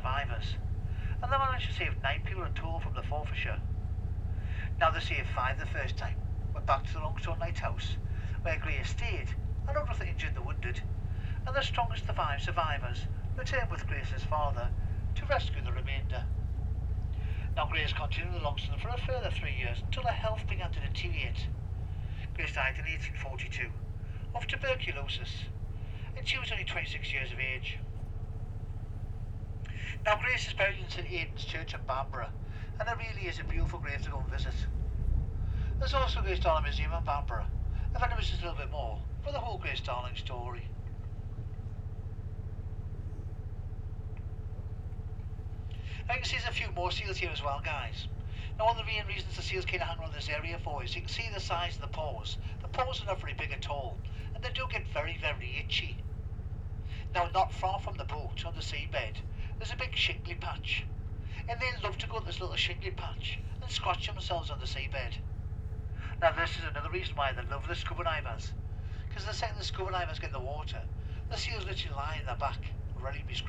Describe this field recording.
Grey seal cruise ... Longstone Island ... commentary ... background noise ... lavalier mics clipped to baseball cap ...